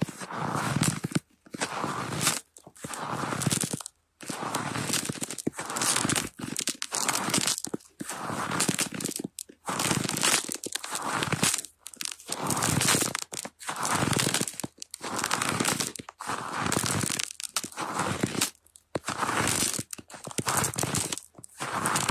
When the temperature was many days under the zero and the snow fell quite a few days ago, this clip is interesting because it combines the sound of snow and ice beneath it. Nice snow and crispy ice recordings!
Zoom H4n PRO
Internal Mics